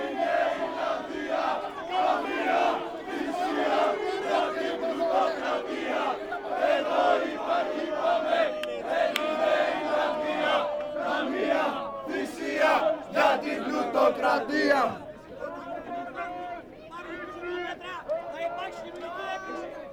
Athens. Protesters in front of the parliament. 05.05.2010 - PAME
5 May, 13:25